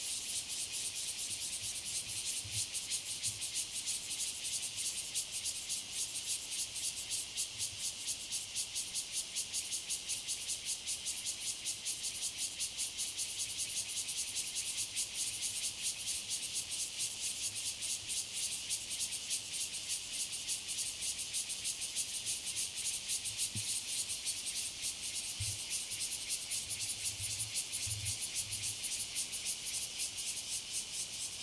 Rueisuei Township, Hualien County - Cicadas sound
Cicadas sound
Zoom H2n MS+XY